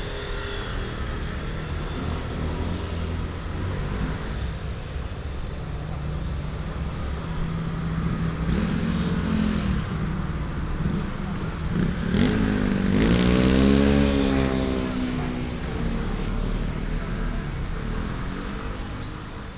walking in the Street, Through the market, Traffic sound
Yangmei District, Taoyuan City, Taiwan, 18 January, 12:25